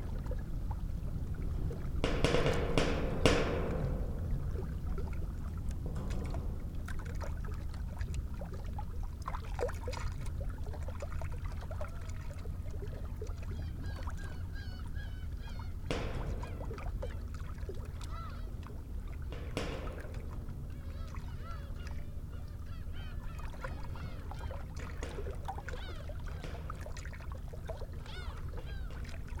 New road into Saemangeum wetland area, inside Saemangeum seawall - Steel fence across wetland
Inside the Saemangeum seawall, a 7ft steel sheet wall runs beside new roading into the tidal wetland.
Jeollabuk-do, South Korea, 4 May 2017